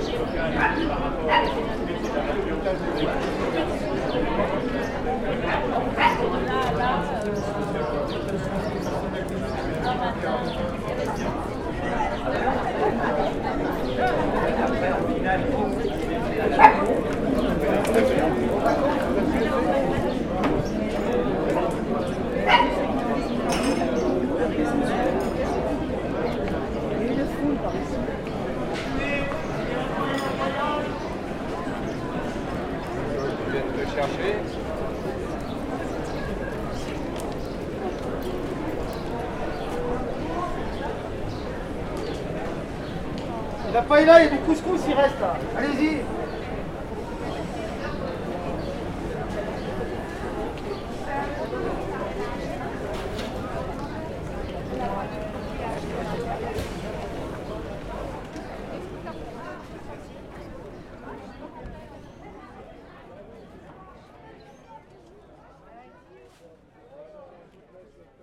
Rue du Commerce, Aix-les-Bains, France - Bar du Marché
Jour de marché la très animée rue du commerce avec la terrasse du bar du marché, un joueur de hand pan se prépare.